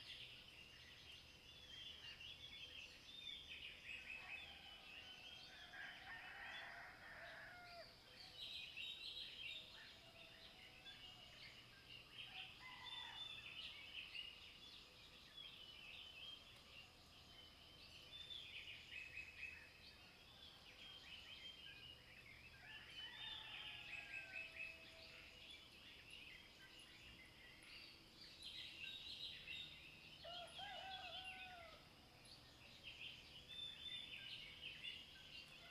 綠屋民宿, 桃米生態村 - Early morning
Crowing sounds, Bird calls, Frogs chirping, Early morning
Zoom H2n MS+XY